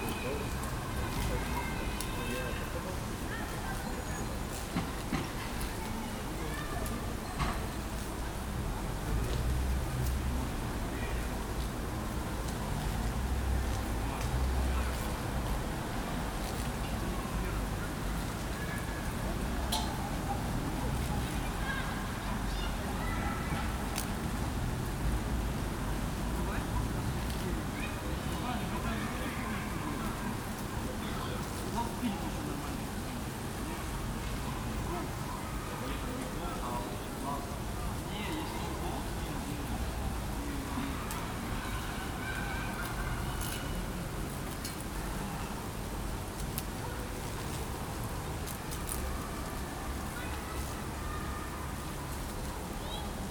Sonyachnyy Bulʹvar, Slavutych, Kyivska oblast, Ukrajina - Humming power line vs crashing cockchafers in the housing estate
Kyivska oblast, Ukraine